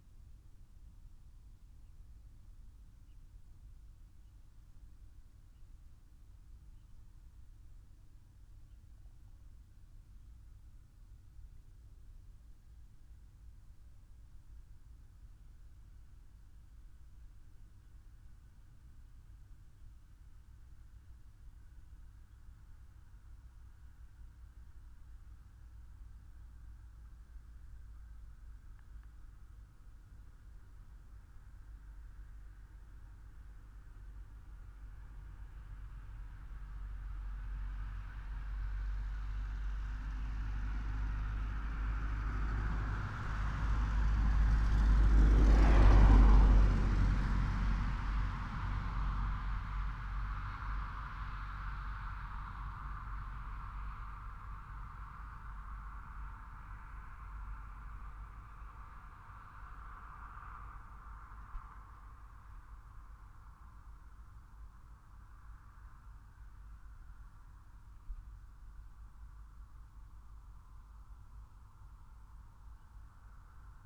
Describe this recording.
national moment of reflection ... minute's silence in memory of the queen ... went out and placed a xlr sass on bench to zoom h5 ... just to mark the passing of this moment ...